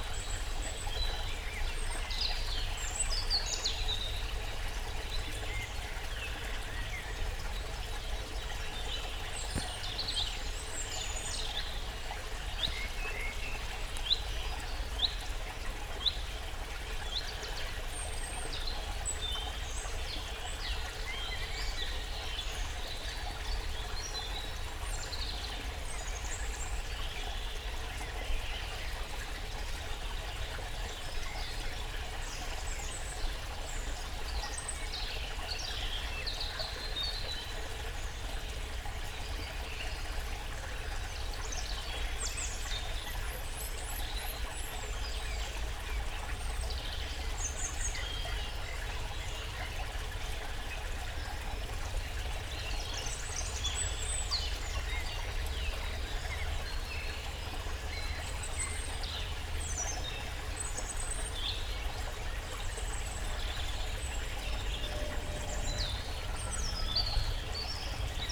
Thielenbruch, Köln, Deutschland - Kemperbach, forest ambience
Köln Thielenbruch forest, on a wooden bridge over creek Kemperbach, forest ambience in early spring
(Sony PCM D50, DPA4060)
21 March 2019, 17:05